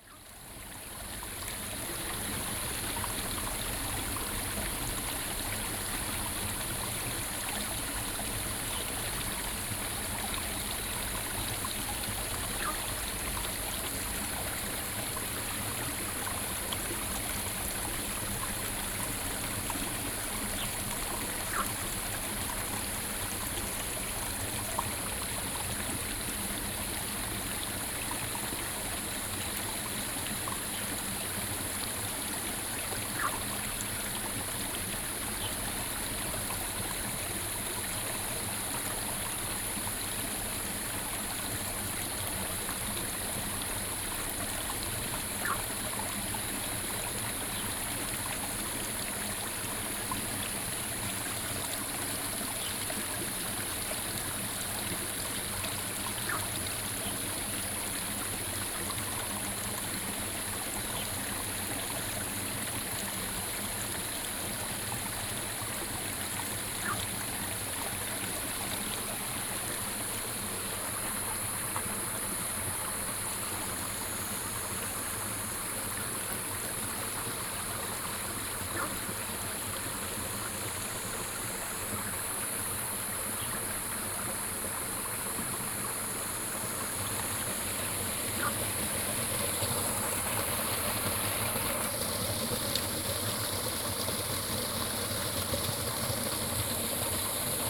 Xiaopingding, Tamsui River, New Taipei City - sound of the Stream
Frog calls, Stream, Sony PCM D50
3 June 2012, 07:37